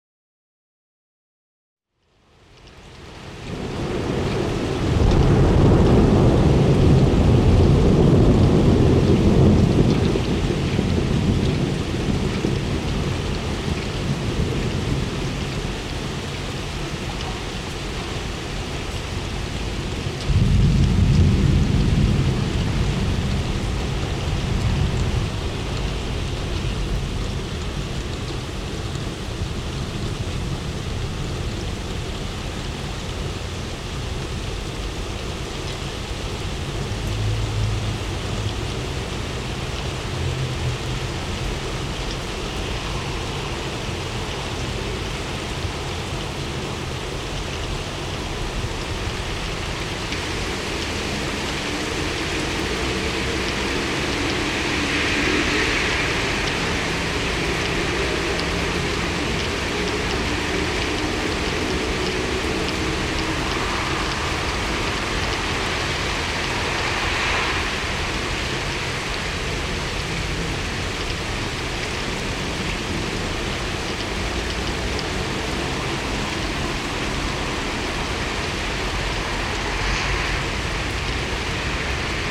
Kapelstraat, Rotterdam, Netherlands - Thunderstorm
Thunderstorm and rain. Recorded with a Dodotronic parabolic stereo.
Zuid-Holland, Nederland